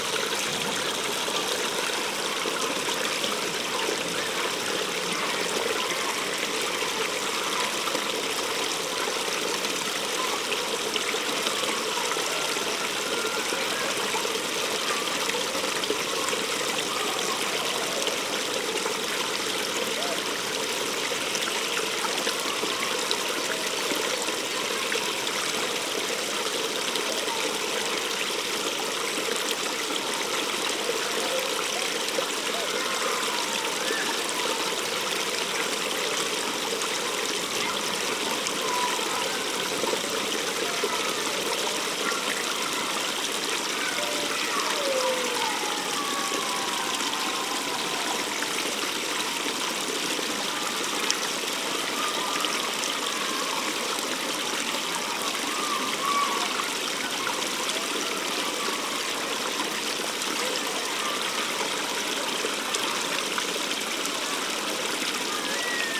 Water stream in Parque da Lavandeira recorded with a Sony M10.
Porto, Portugal, 6 May 2021